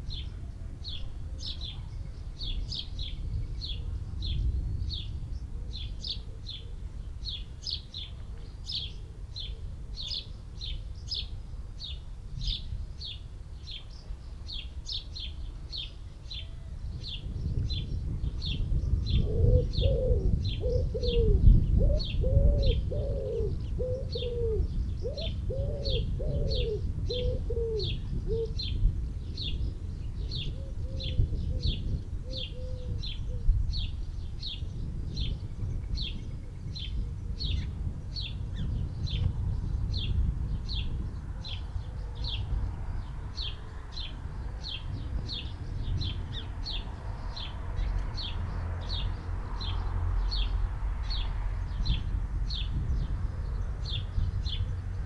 Chapel Fields, Helperthorpe, Malton, UK - approaching thunderstorm ...
approaching thunderstorm ... mics through pre-amp in a SASS ... traffic noise etc ... bird calls ... collared dove ... house sparrow ... tree sparrow ... wood pigeon ... house martin ... starling ...
27 July, 19:30